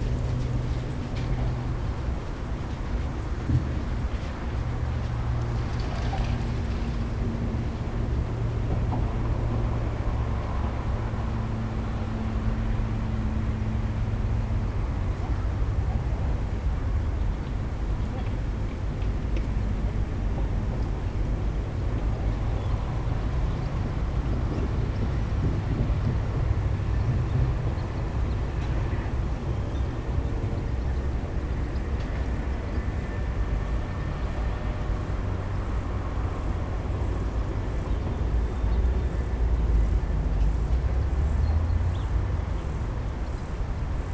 berlin wall of sound-britzerzweigkanal. j.dickens 020909
Berlin, Germany